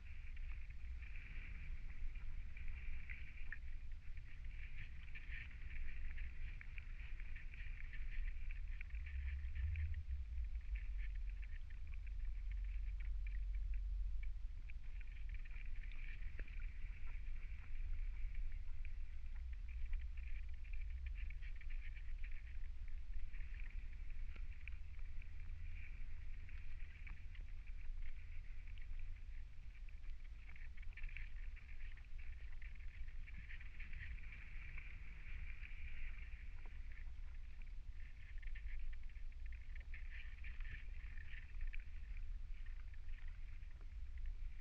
Kranenburgweg, Den Haag - hydrophone rec in the shore
Mic/Recorder: Aquarian H2A / Fostex FR-2LE